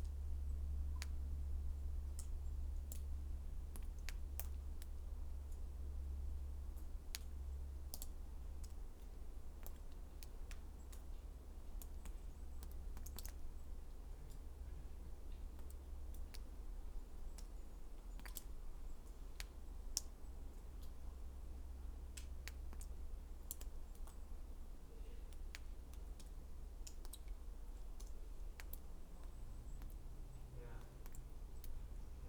N Hazelrigg, Chatton, Alnwick, UK - st cuthberts cave ...
st cuthbert's cave ... an overhanging outcrop of sandstone rock ... supposedly st cuthbert's body was brought here by the monks of Lindisfarne ... set my mics up to record the soundscape and dripping water ... a group of walkers immediately appeared ... they do a good job of describing the cave and its graffiti ... lavalier mics clipped to bag ...